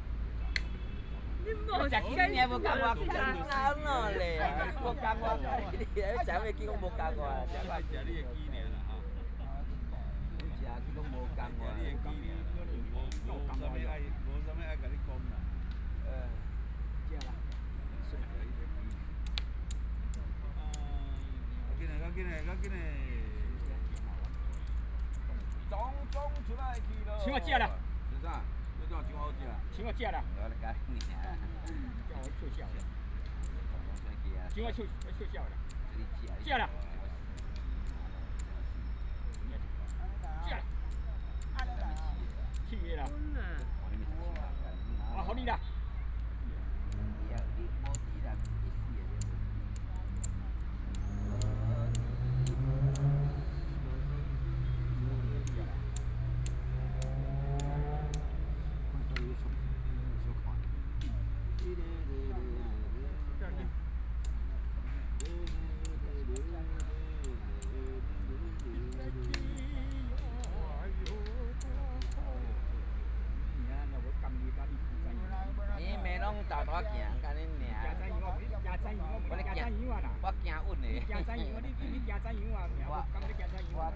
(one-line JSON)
{"title": "榮星公園, Zhongshan District - Old man playing chess", "date": "2014-01-20 15:33:00", "description": "Old man playing chess and Dialogue among the elderly, Traffic Sound, Binaural recordings, Zoom H4n+ Soundman OKM II", "latitude": "25.06", "longitude": "121.54", "timezone": "Asia/Taipei"}